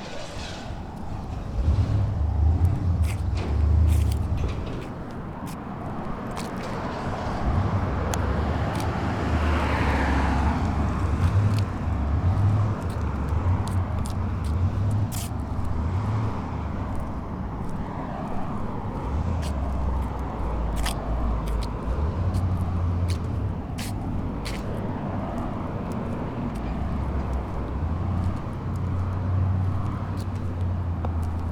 Goss - Grove, Boulder, CO, USA - Alleyway Drag
6 February 2013, 07:00